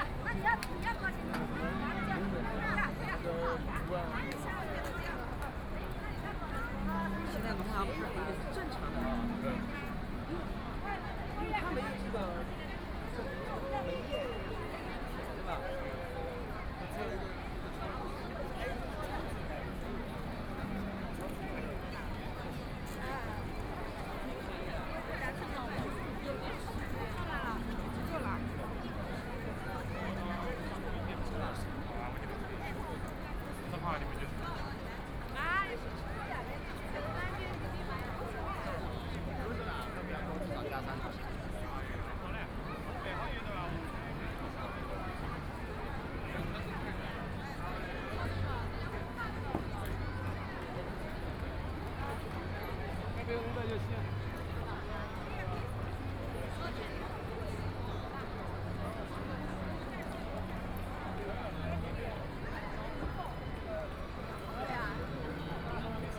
walking in the Business Store hiking area, Very many people and tourists, Binaural recording, Zoom H6+ Soundman OKM II
Huangpu, Shanghai, China